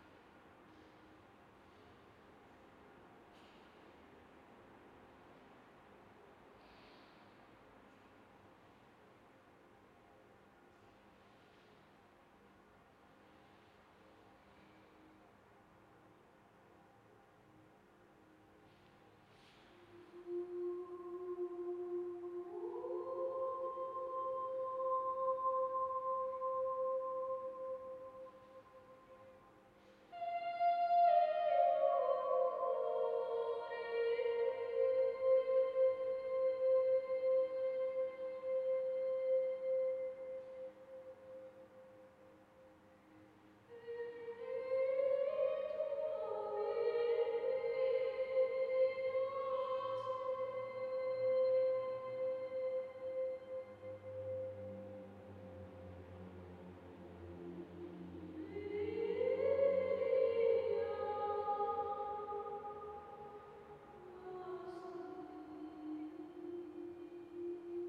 empty church, traffic outside, singer oona kastner rehearsing
Bielefeld, Germany